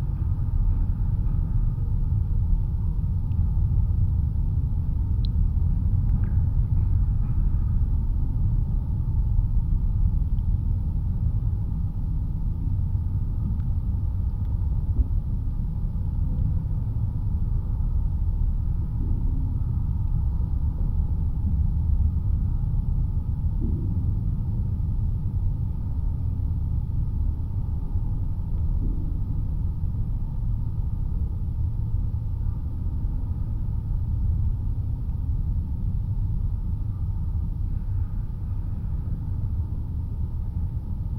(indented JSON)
{
  "title": "Galeliai, Lithuania, abandoned watertower",
  "date": "2019-12-29 15:30:00",
  "description": "contact microphones on the base of abandoned metallic water tower - a relict from soviet times. another one to my collection:)",
  "latitude": "55.56",
  "longitude": "25.54",
  "altitude": "95",
  "timezone": "Europe/Vilnius"
}